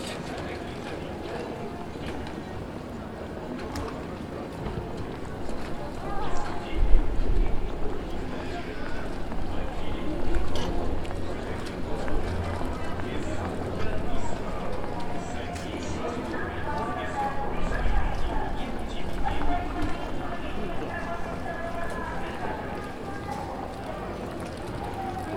Pravoberezhnyy okrug, Irkutsk, Irkutskaya oblast', Russie - pedestrian street - sales promotion on loudspeakers
pedestrian street in Irkutsk - lots of shops - people walking and resting on benches - music out on megaphones - sales promotion diffused on loudspeakers
Irkutsk, Irkutskaya oblast', Russia, 26 October